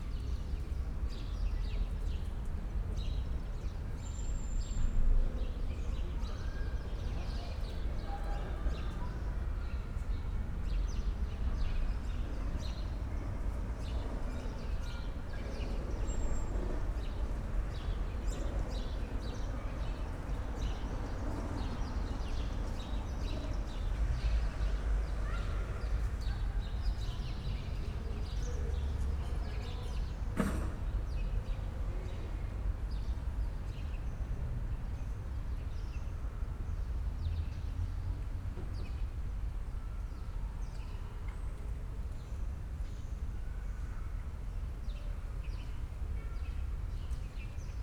Stallschreiberstraße, Berlin Kreuzberg - residential area, inner yard ambience
Stallschreiberstraße, Berlin Kreuzberg, ambience at an abandoned littel playground between the house, autumn Sunday afternoon
(Sony PCM D50, DPA4060)
Deutschland, 8 November 2020